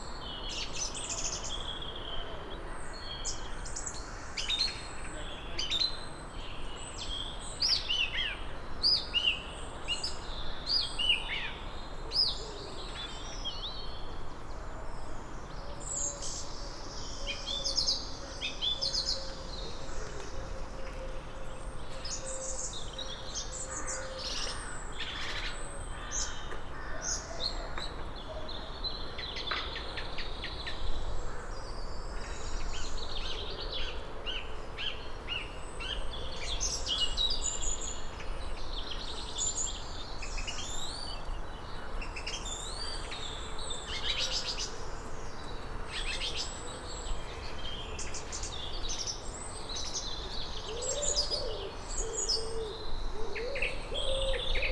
Plymouth, UK - Song thrush (and squirrels)
25 January 2014, 09:59